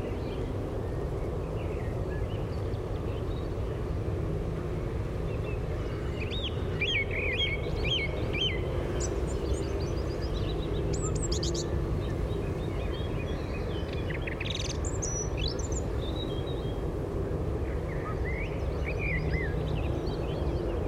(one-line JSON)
{"title": "Broekkade, Schiedam, Netherlands - Trains, frogs, birds", "date": "2021-06-01 21:40:00", "description": "Recorded with Dodotronic parabolic dish.", "latitude": "51.94", "longitude": "4.39", "timezone": "Europe/Amsterdam"}